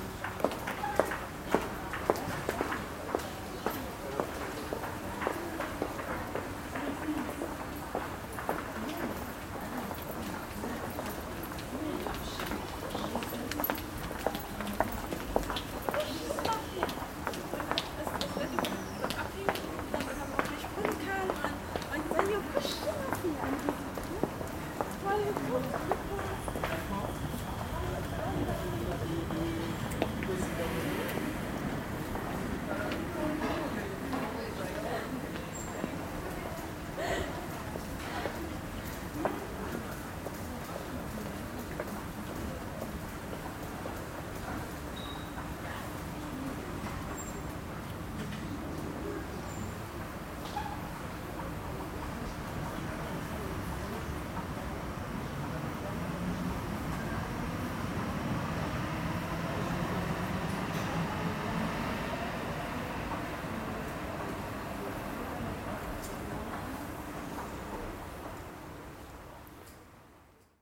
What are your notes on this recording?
Recorded july 4th, 2008. project: "hasenbrot - a private sound diary"